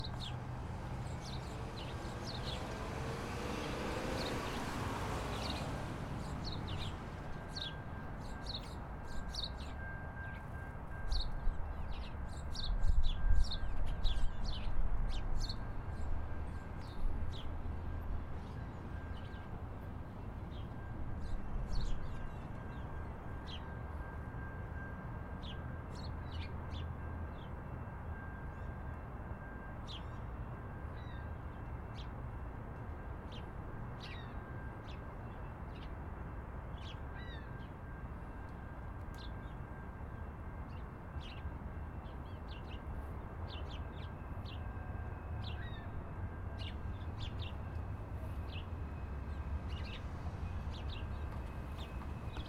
East Village, Calgary, AB, Canada - TMV Bird Song
This is my Village
Tomas Jonsson